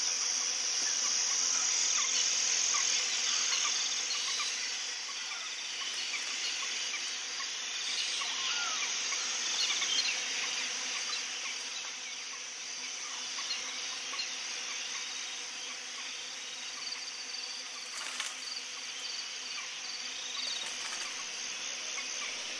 cologne, stadtgarten, parkradio installation
temporäre parkradio installation im rahmen von plan06 - artist: fs
project: klang raum garten/ sound in public spaces - in & outdoor nearfield recordings
6 May 2008, 21:56